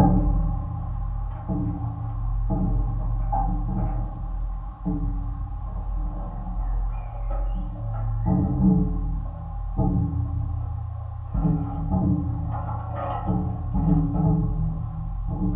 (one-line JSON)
{"title": "Antalieptė, Lithuania, old hanging bridge", "date": "2020-05-15 14:40:00", "description": "hanging bridge with a sign \"No Trespass\" (but the locals still walk through it). Geophone on some support wires.", "latitude": "55.66", "longitude": "25.86", "altitude": "110", "timezone": "Europe/Vilnius"}